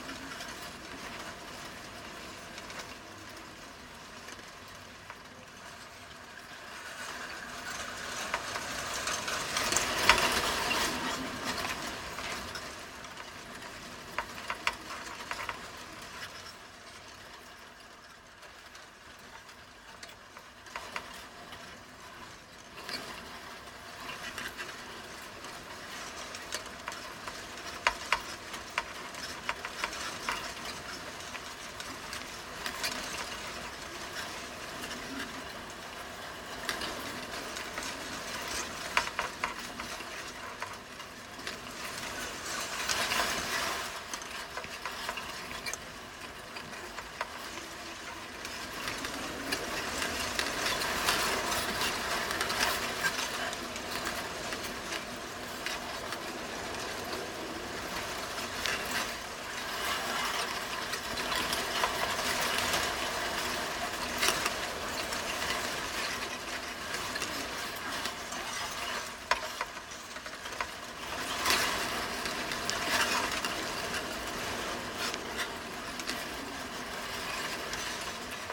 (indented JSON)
{
  "title": "metal fence at Avebury stone circle crossing",
  "date": "2009-10-13 16:41:00",
  "description": "contact mics attached to a wire fence in Avebury catching wind and grass sounds.",
  "latitude": "51.43",
  "longitude": "-1.85",
  "altitude": "159",
  "timezone": "Europe/Tallinn"
}